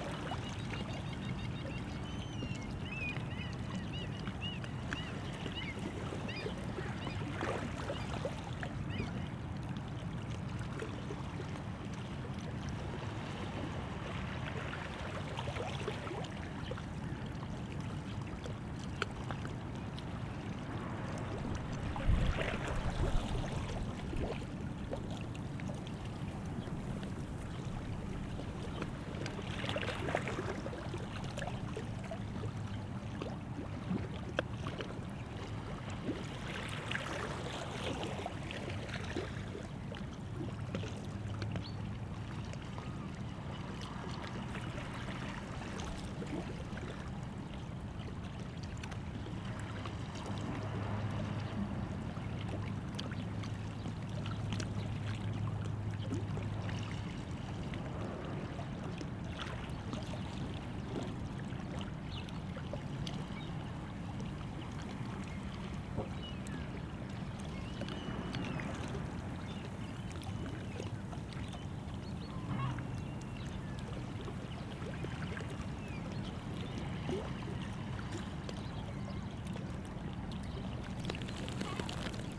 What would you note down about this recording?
seaside, closed harbour area starts here, sounds of harbou in background